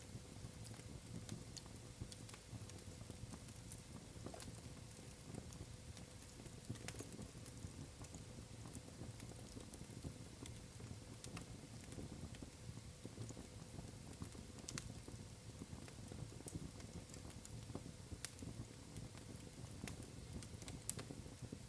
inside recording.
stafsäter recordings.
recorded july, 2008.
sweden